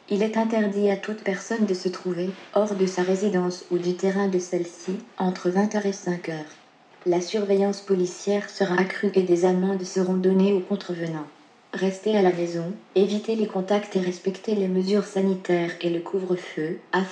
Recording of the Québec Alert Ready – Emergency Alert for the January 9th, 2021, home curfew. Both English and French versions are recorded.
Québec, Canada, 9 January 2021